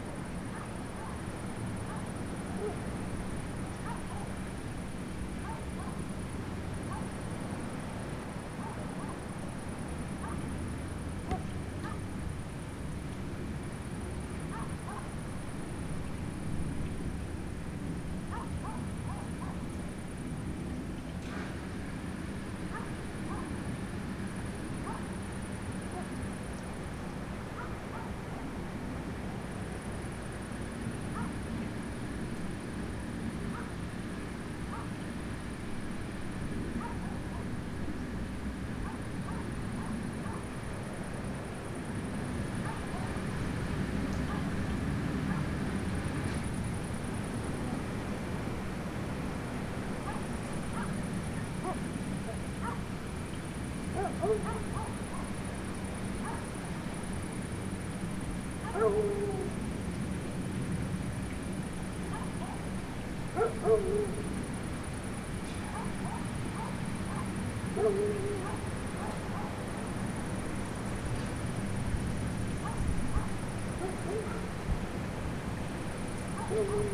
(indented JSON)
{
  "title": "Portugal - Noite",
  "date": "2018-07-13 01:31:00",
  "description": "ATLAS Melgaço - Sound Workshop",
  "latitude": "42.10",
  "longitude": "-8.29",
  "altitude": "75",
  "timezone": "Europe/Lisbon"
}